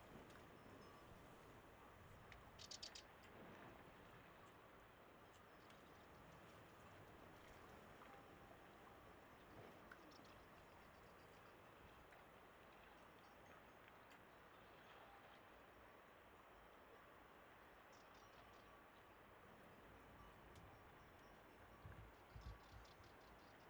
Patmos, Liginou, Griechenland - Vor dem Haus
Ich stehe im Windschatten, vor dem Haus.